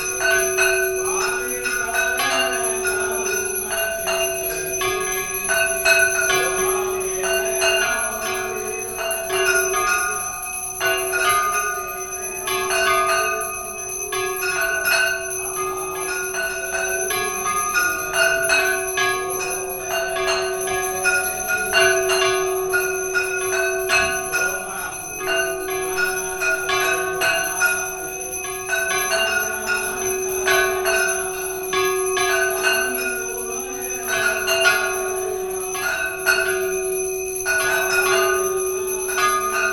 Sewak Nagar, Gwalior, Madhya Pradesh, Inde - Hanuman temple
A ceremony dedicated to Hanuman.
Gwalior, Madhya Pradesh, India, 24 October